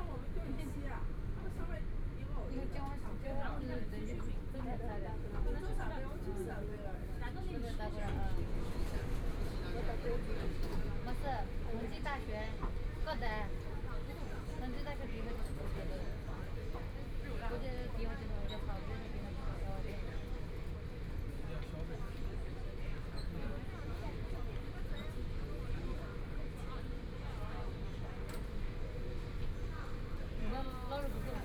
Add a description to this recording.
From East Nanjing Road to Tongji University station, The sound of the crowd, Train broadcast messages, Binaural recording, Zoom H6+ Soundman OKM II